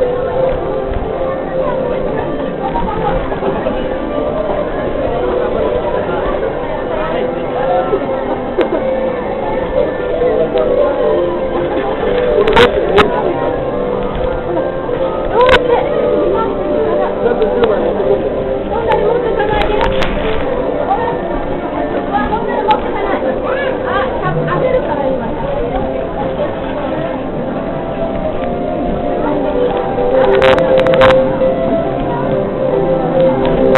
Gamecenter in Nakano Tokyo 15.Dez.07 by I.Hoffmann